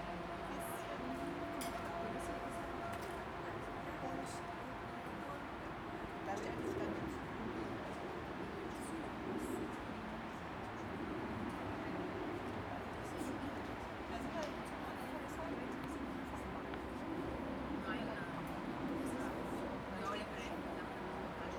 S-Café Friedenau, Berlin, Deutschland - S-Café Friedenau
the s-café in friedenau (a berlin district) is located near the rails of the s-bahn, so you hear the train passing every 10 minutes. people are chatting and drinking coffe on the litte square in front of the station.
Berlin, Germany, 19 July 2013, 12:30